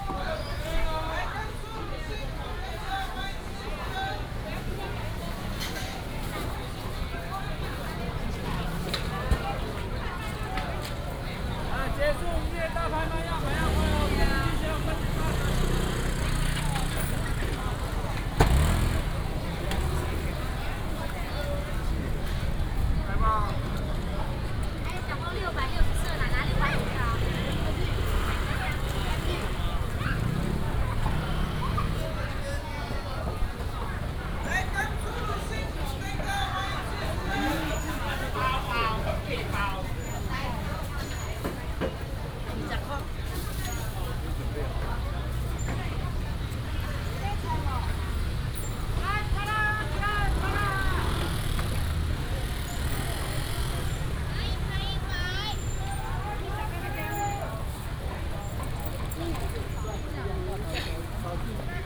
{"title": "Wenhua St., Taoyuan Dist., Taoyuan City - the market district", "date": "2018-01-14 10:44:00", "description": "Walking in the market district, Traffic sound, Street vendors selling sounds", "latitude": "24.99", "longitude": "121.31", "altitude": "105", "timezone": "Asia/Taipei"}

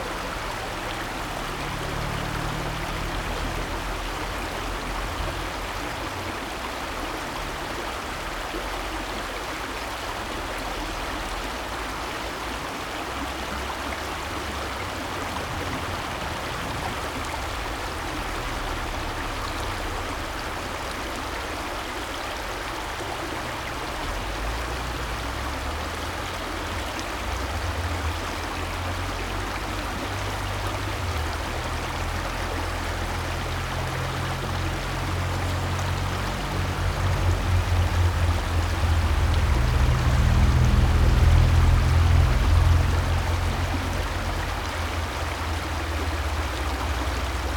{"title": "Herchen a.d. Sieg - Wasserströmung der Sieg / river Sieg current", "date": "2009-05-21 15:00:00", "description": "21.05.2009", "latitude": "50.77", "longitude": "7.52", "altitude": "102", "timezone": "Europe/Berlin"}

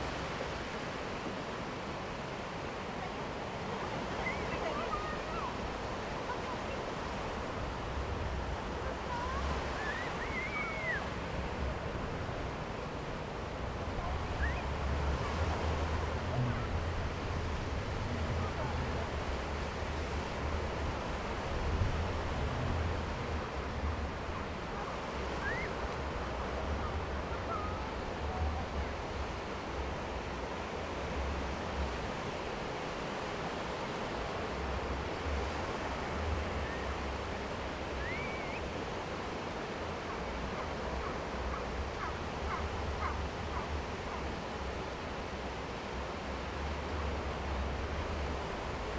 {"title": "Japonia - Kamakura Seaside", "date": "2015-01-13 20:03:00", "description": "Seaside at Kamakura. Windsurfers, children playing. Recorded with Zoom H2n.", "latitude": "35.31", "longitude": "139.54", "altitude": "5", "timezone": "Asia/Tokyo"}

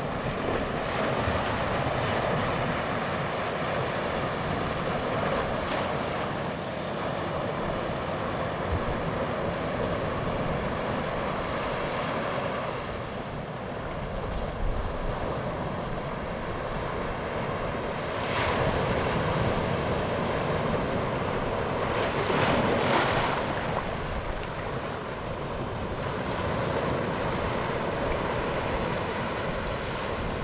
{"title": "Shalun, Dayuan Dist., Taoyuan City - Sound of the waves", "date": "2016-11-20 14:27:00", "description": "Sound of the waves\nZoom H2n MS+XY", "latitude": "25.11", "longitude": "121.23", "timezone": "Asia/Taipei"}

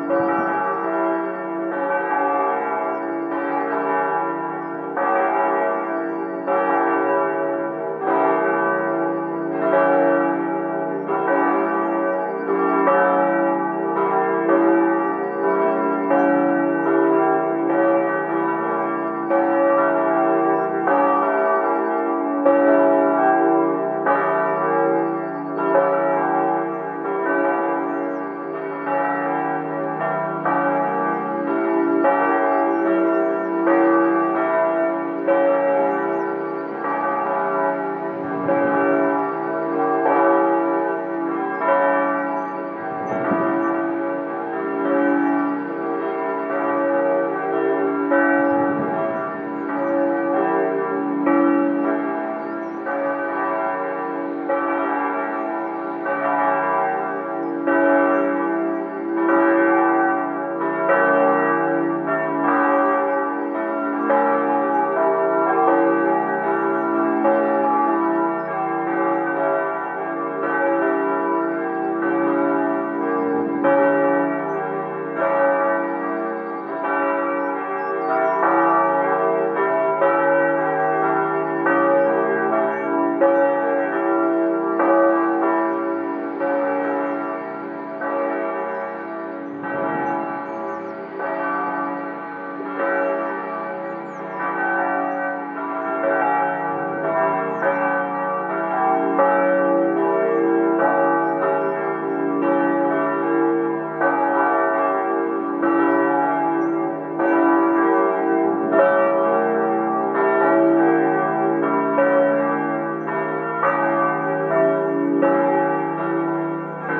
{"title": "Easter Sunday 6am Łódź, Poland - Rooftop, Easter Sunday 6am Łódź, Poland", "date": "2012-04-08 06:00:00", "description": "rooftop recording made at 6am on Easter Sunday. The bells mark the beginning of the procession around the church. Recorded during a sound workshop organized by the Museum Sztuki, Lodz.", "latitude": "51.75", "longitude": "19.46", "altitude": "198", "timezone": "Europe/Warsaw"}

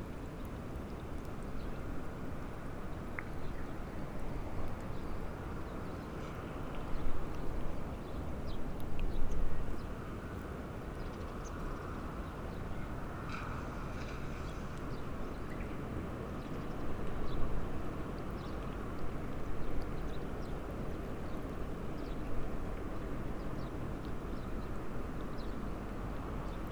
{"title": "진도 갯벌 exposed mudflat on Jindo", "date": "2022-04-26 15:00:00", "description": "진도 갯벌_exposed mudflat on Jindo...mudflat life stirring", "latitude": "34.37", "longitude": "126.20", "altitude": "3", "timezone": "Asia/Seoul"}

